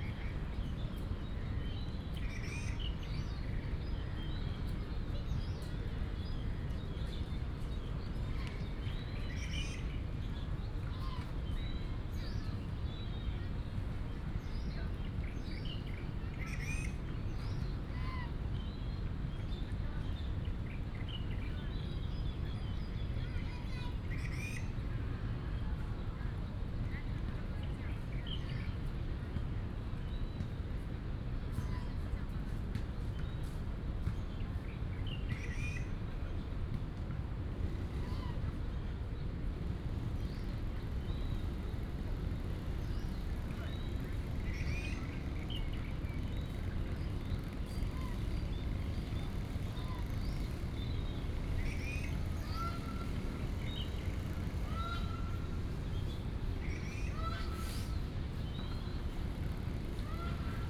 醉月湖, National Taiwan University - Bird sounds and Goose calls
At the university, Bird sounds, Goose calls, pigeon